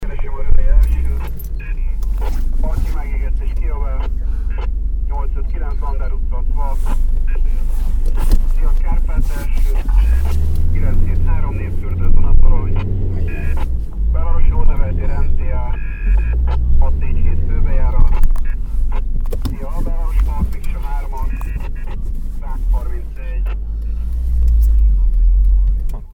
taxi message receiver, short
international city scapes and social ambiences
Magyarország, European Union